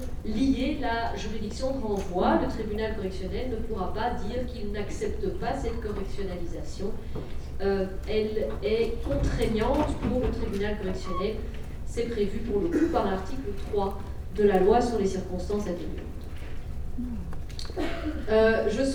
Quartier des Bruyères, Ottignies-Louvain-la-Neuve, Belgique - A course of legal matters
In the Montesquieu auditoire, a course of legal matters. Near everybody is sleeping ^^